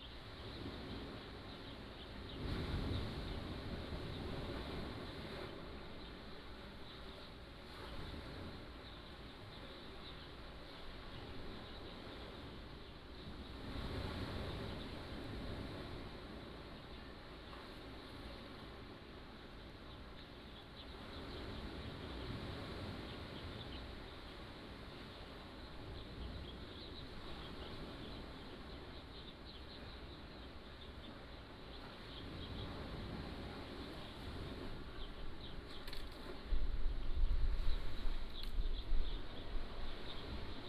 津沙港, Nangan Township - In the small port
Aboard yacht, Sound of the waves
連江縣, 福建省 (Fujian), Mainland - Taiwan Border, 14 October 2014